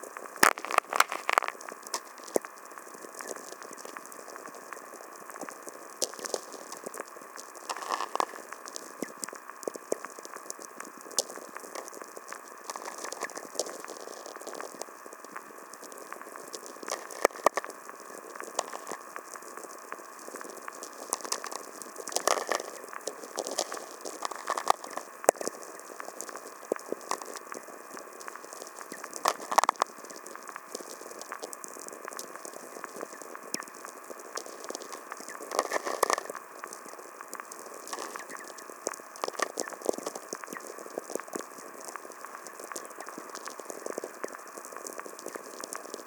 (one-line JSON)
{"title": "Senheida, Latvia, strong VLF atmospheric electricity", "date": "2020-07-30 22:00:00", "description": "recorded with VLF receiver. some tweakers are heard", "latitude": "55.77", "longitude": "26.74", "altitude": "156", "timezone": "Europe/Riga"}